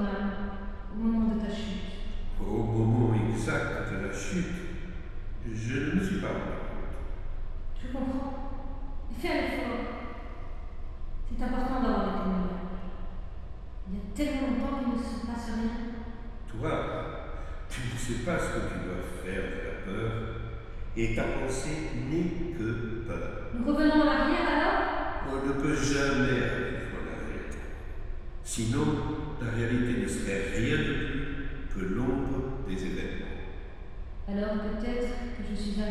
R. da Cadeia, Elvas, Portugal - Sound piece
Vasco Araujo sound piece @ Museu de Arte Contemporanea de Elvas. Recorded with a pair of primo 172 omni mics in AB stereo configuration into a SD mixpre6.